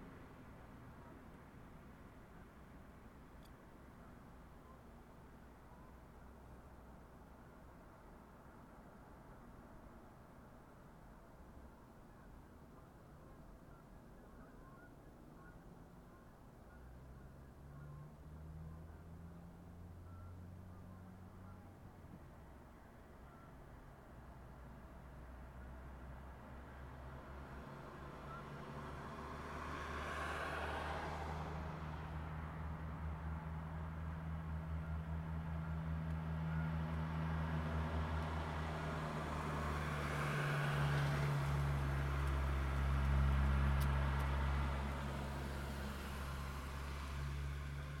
Allentown, PA, USA - South East Corner of Campus
The bell tolls 5pm on this crisp December evening.